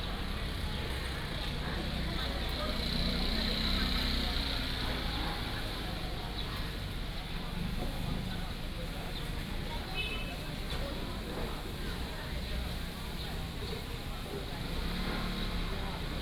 In front of the temple square, tourist